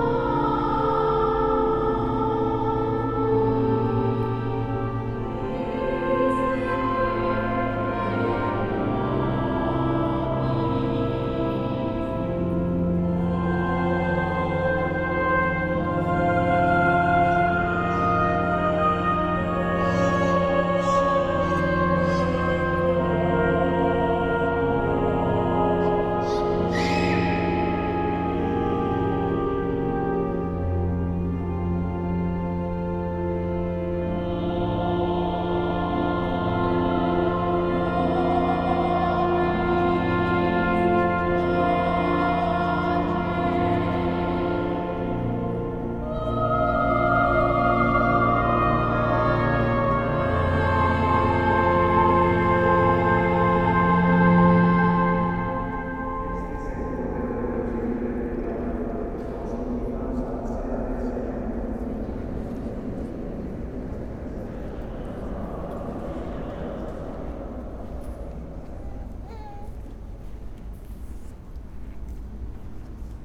de Septiembre, Centro, León, Gto., Mexico - Caminando en el exterior del templo expiatorio, entrando al templo y saliendo de nuevo.
Walking outside the expiatory temple, entering the temple, and leaving again.
You can hear people passing by outside, people selling things, cars passing through the street, and the sound of tires on the characteristic floor of Madero Street. Then the sound of entering the temple where a wedding was taking place and then the music begins. Some sounds of people and babies crying.
And at the end going out again and where there are people talking, cars passing by with loud music and then arriving at the corner where there are stands selling tacos and food for dinner.
I made this recording on October 23rd, 2021, at 8:49 p.m.
I used a Tascam DR-05X with its built-in microphones and a Tascam WS-11 windshield.
Original Recording:
Type: Stereo
Caminando en el exterior del templo expiatorio, entrando al templo y saliendo de nuevo.
Guanajuato, México